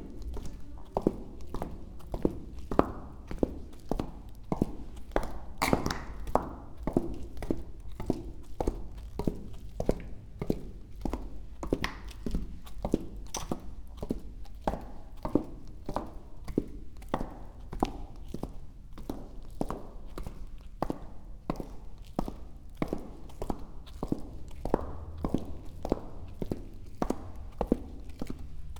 Vinag, tunnel cellar, Maribor - walking, with clogs